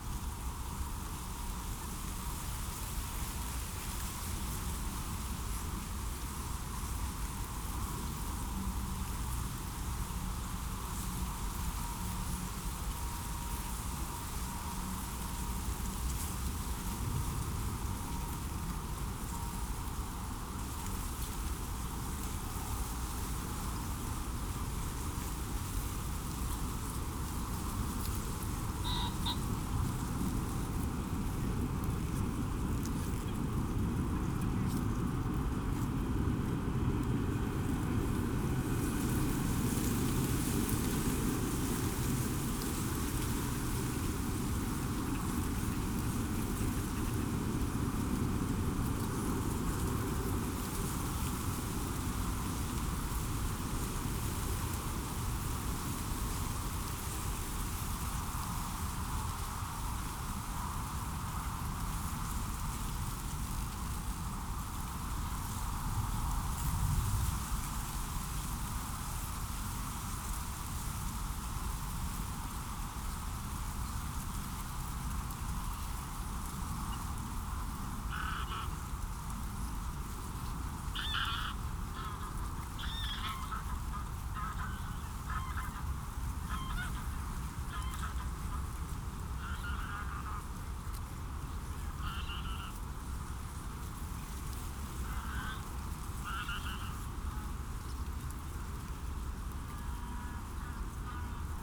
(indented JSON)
{
  "title": "Moorlinse, Buch, Berlin, Deutschland - wind in reed, distant birds",
  "date": "2019-03-30 15:05:00",
  "description": "The Moorlinse Buch is a natural water body in the Berlin district Buch in the district Pankow in the lowland of the Panke. It was formed in the 1990s by filling a depression with groundwater and stratified water.\nAccording to old property maps from the 18th and 19th centuries, the area was originally a wet meadow. This was dried up by the establishment of the Berlin sewage fields at the end of the 19th century and because of the intensive agriculture in the surroundings since the 1950s.\nAs a breeding ground for almost all local waterfowl species, it became a popular observation site for ornithologists. Various amphibians have also settled here. As a habitat for endangered animal species, the Moorlinse has a similar significance as the nearby landscape conservation area of the Karow ponds, Bogensee and Karpfenteiche in Buch.\n(Sony PCM D50, DPA4060)",
  "latitude": "52.63",
  "longitude": "13.48",
  "altitude": "53",
  "timezone": "Europe/Berlin"
}